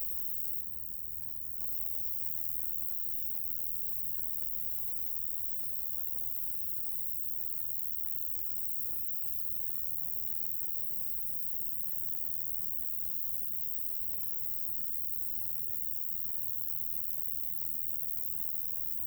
Saint-Martin-de-Nigelles, France - Crickets in the grass
On a very hot summer day, crickets in the grass and small wind in the blades of grass.
19 July, 09:58